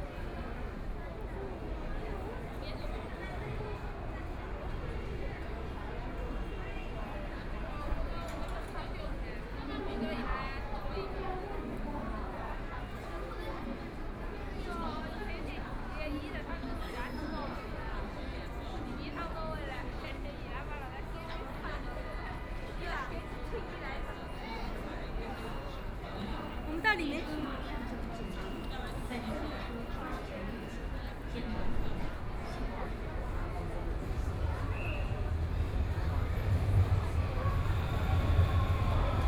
Zhongshan Park Station, Shanghai - walking into Station
From the mall to the subway station, Train stops, Voice message broadcasting station, Trains traveling through, Binaural recording, Zoom H6+ Soundman OKM II
Putuo, Shanghai, China, 23 November 2013